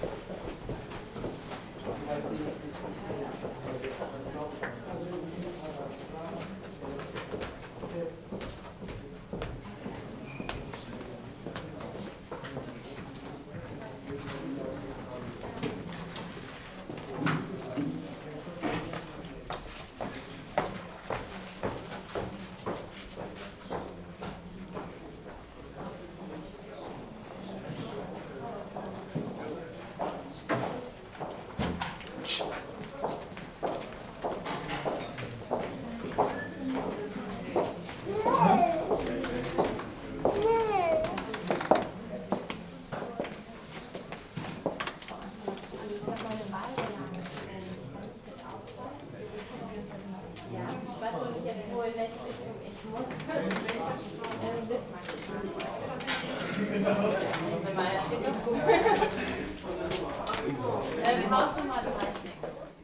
köln, antwerpener str. - wahltag / election day
bundestagswahl 2009, wahllokal in der grundschule
bundestag elections 2009, polling station at the elementary school
Cologne, Germany, 27 September 2009